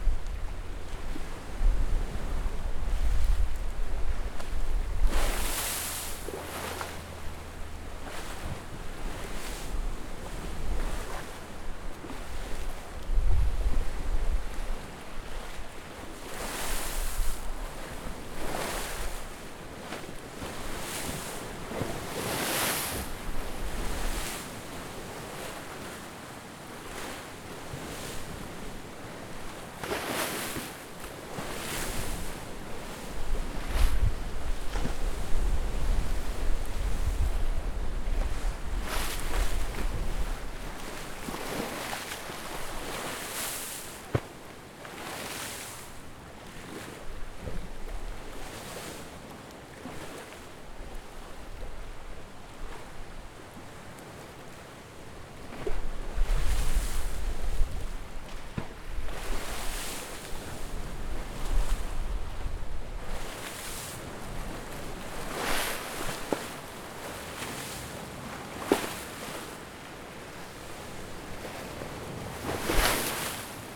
{"title": "Lakeshore Ave, Toronto, ON, Canada - Waves on breakwater", "date": "2019-08-08 15:44:00", "description": "Waves crashing against a concrete breakwater.", "latitude": "43.62", "longitude": "-79.37", "timezone": "GMT+1"}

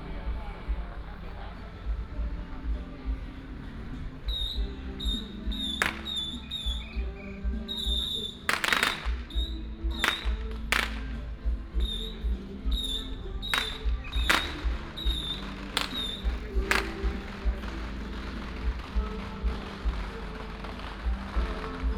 {"title": "Sanmin Rd., Baozhong Township - Matsu Pilgrimage Procession", "date": "2017-03-01 15:47:00", "description": "Firecrackers and fireworks, Many people gathered at the intersection, Matsu Pilgrimage Procession", "latitude": "23.70", "longitude": "120.31", "altitude": "12", "timezone": "Asia/Taipei"}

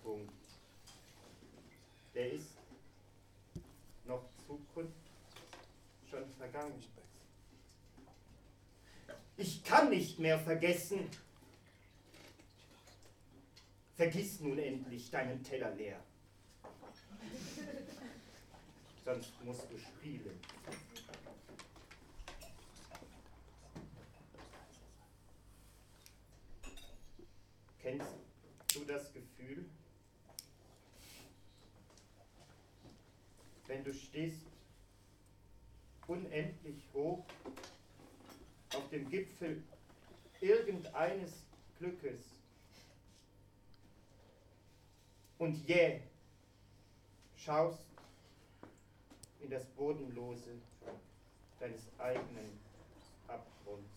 {"title": "koeln, cafe storch - poor poetry", "date": "2009-01-13 00:20:00", "description": "13.01.2009 0:20 i went here late at night starving, and it wasn't exactly exciting to listen to this performance. but this place serves foot until it closes, and it's way cool since it hasn't changed style in 100 years...", "latitude": "50.94", "longitude": "6.94", "altitude": "55", "timezone": "Europe/Berlin"}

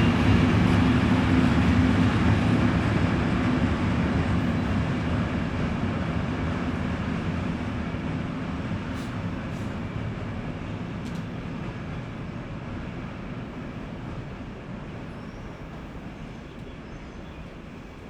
{"title": "Spielfeld, Strass, Steiermark - freight train departing", "date": "2012-06-03 14:50:00", "description": "a freight train departs direction Slovenia\n(SD702 AT BP4025)", "latitude": "46.71", "longitude": "15.63", "altitude": "260", "timezone": "Europe/Vienna"}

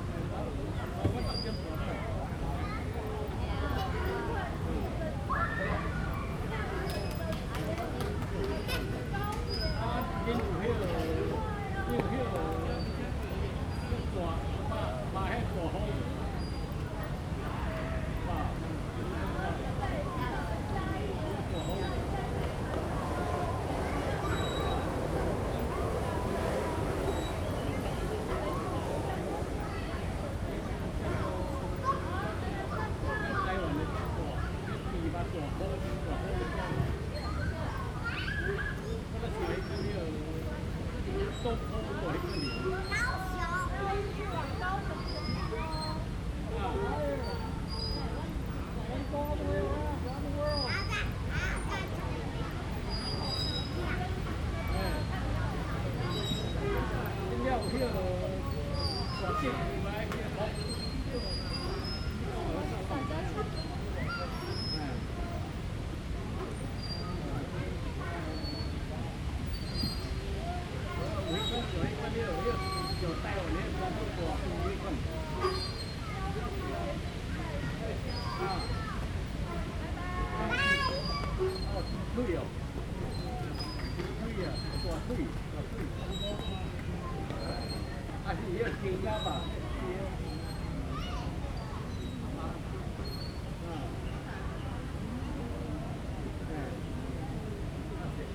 {"title": "臺南公園, East Dist., Tainan City - Children's play area", "date": "2017-02-18 16:30:00", "description": "in the Park, Children's play areas, The old man\nZoom H2n MS+XY", "latitude": "23.00", "longitude": "120.21", "altitude": "21", "timezone": "Asia/Taipei"}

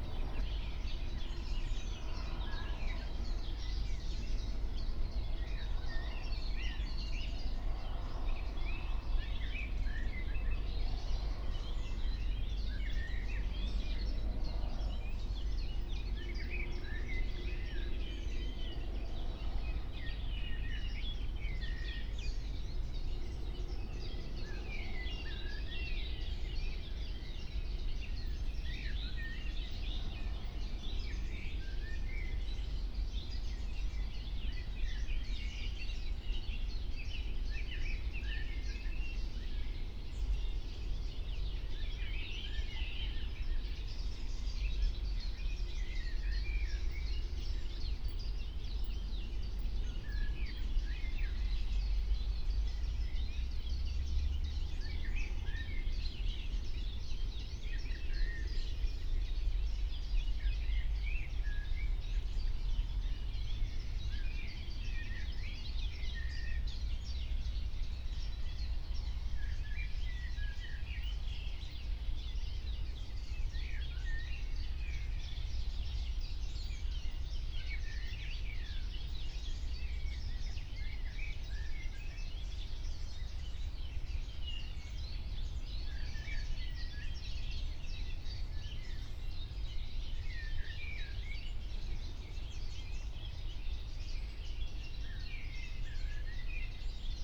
{"date": "2021-06-17 04:00:00", "description": "04:00 Berlin, Wuhletal - Wuhleteich, wetland", "latitude": "52.53", "longitude": "13.58", "altitude": "40", "timezone": "Europe/Berlin"}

